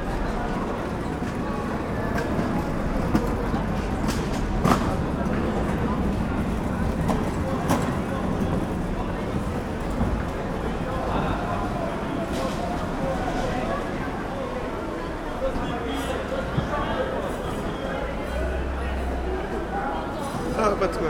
Allée Jean Lurçat, Juvisy-sur-Orge, France - Food market in Juvisy
Market atmosphere, indoor hall
Ambiance de marché, dans une halle
28 October 2017, 12:54